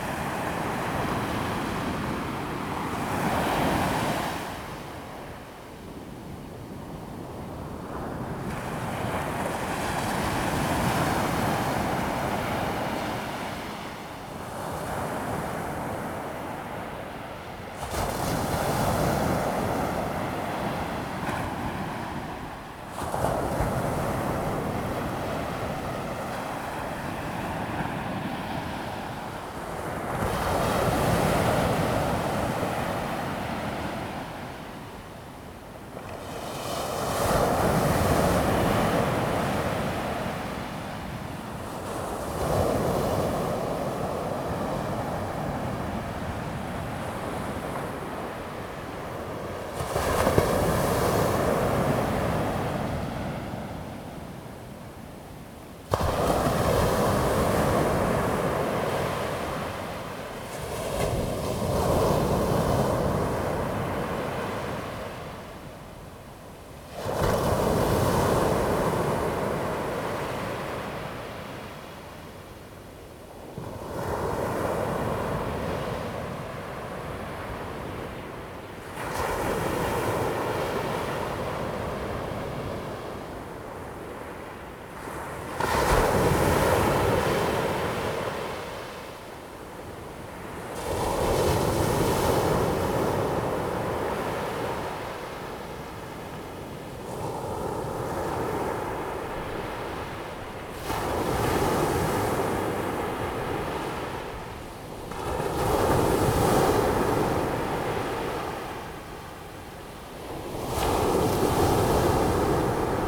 On the beach, Sound of the waves
Zoom H2n MS+XY